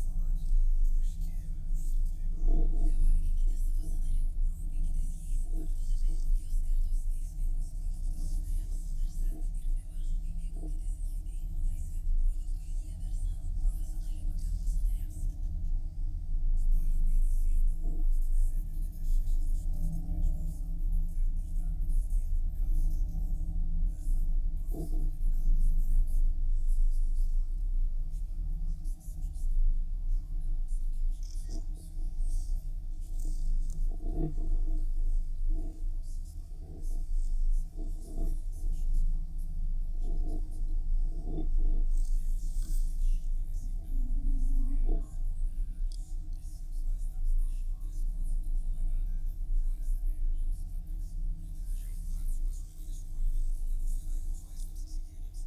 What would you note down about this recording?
one of thick metallic cables holding TV tower. contact microphone recording. to my surprise I've discovered not only hum and creak of the cable, but also some radio...